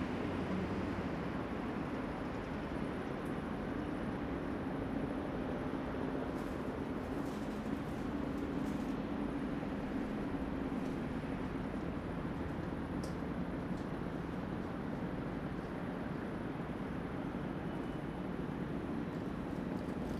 Auf dem Balkon. 23. Stockwerk.
Berlin, Germany, 8 June 2003, 00:12